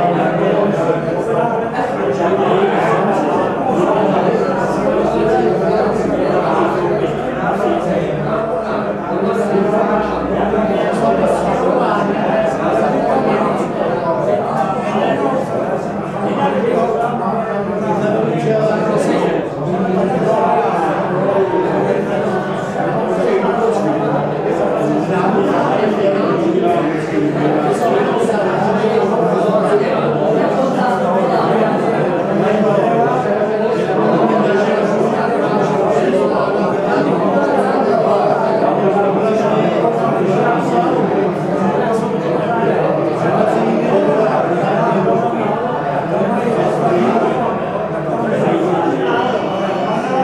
Český Krumlov, Tschechische Republik - Restaurace U Zelené Ratolesti
Český Krumlov, Tschechische Republik, Restaurace U Zelené Ratolesti, Plešivec 245, 38101 Český Krumlov
Český Krumlov, Czech Republic